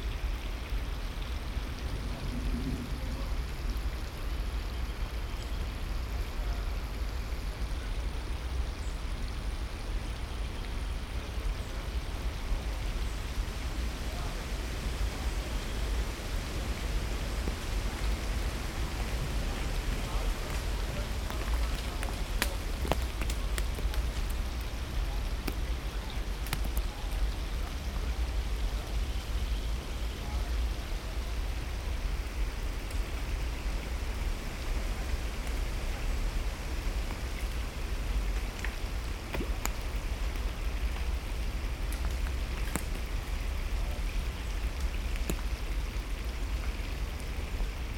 Wolfheze, Netherlands - Vallende Eikels
Acorns falling in the woods near Wolfheze. Stream, wind in trees, voices, motorway traffic in background
Gelderland, Nederland, 2 October 2020, ~13:00